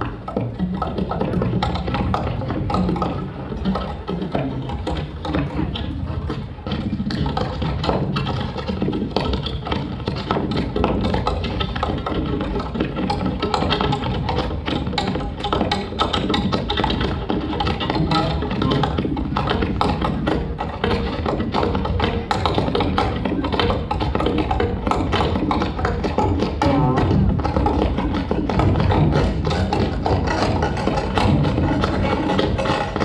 Old Town, Klausenburg, Rumänien - Cluj, Cilelele Clujuli, french performance group point of view
At the street during the Cluj City Festival Cilele 2014. The sound of the french performance group - scena urbana - point of view.
/276204512560657/?ref=22
international city scapes - field recordings and social ambiences
Cluj-Napoca, Romania, 25 May 2014, 18:10